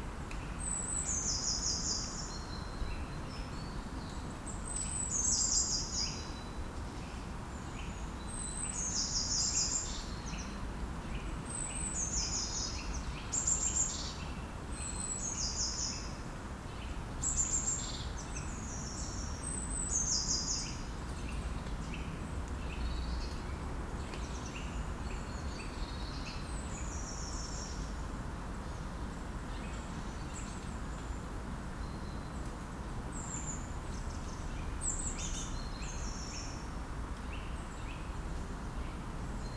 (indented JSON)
{
  "title": "Maintenon, France - Quiet forest",
  "date": "2016-12-24 09:57:00",
  "description": "Very quiet ambiance in a forest during a cold winter morning and two trains crossing.",
  "latitude": "48.59",
  "longitude": "1.59",
  "altitude": "137",
  "timezone": "Europe/Berlin"
}